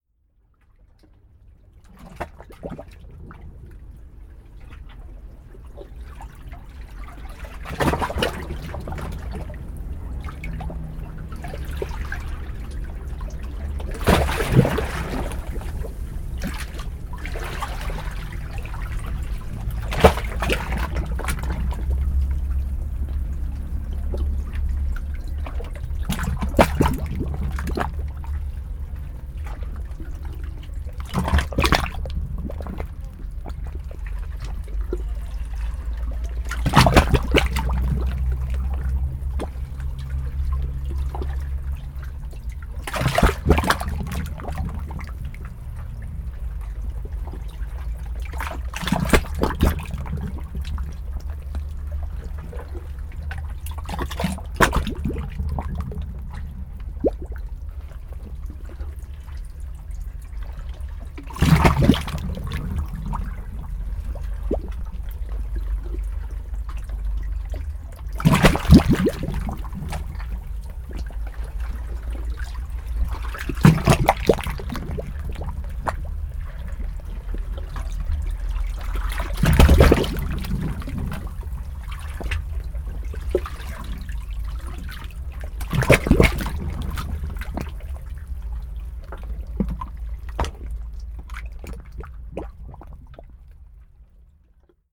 Bari old town - Bari old town suck and draw of waves on seafront rikrak
a greatday exploring Bari on our way back to England by train. After a wonderful seafood lunch we relaxed at the water's edge to the sound of the suck and draw of waves through the rikrak. Recorded to Olympus LS4 with roland binaural mics
Bari, Italy, 11 April, 13:31